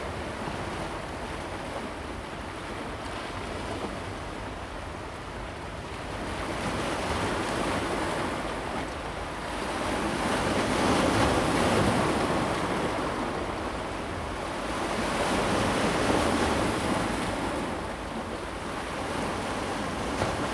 On the banks of the river, tide, yacht
Zoom H2n MS+XY
5 January 2017, 16:32